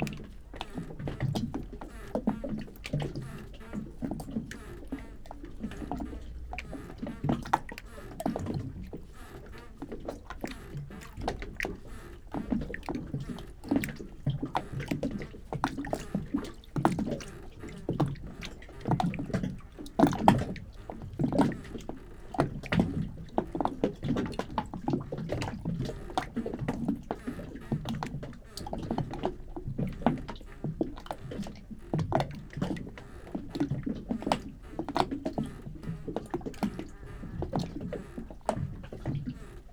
...Lunar New Year...mid-winter night...remarkably quiet Korea
전라남도, 대한민국